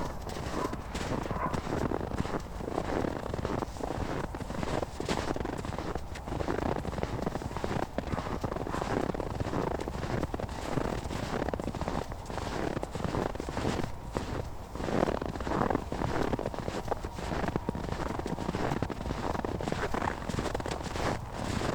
cold and windy afternoon (-10 degrees celsius), snow walk, steps in the snow, short description of the situation by hensch
Descriptions Of Places And Landscapes: december 4, 2010